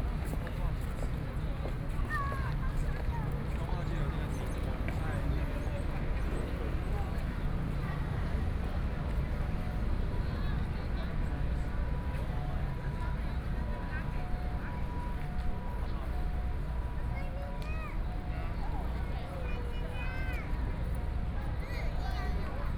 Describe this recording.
Sitting in front of the square, The distance protests, Many tourists, Footsteps, Traffic Sound, Please turn up the volume a little. Binaural recordings, Sony PCM D100+ Soundman OKM II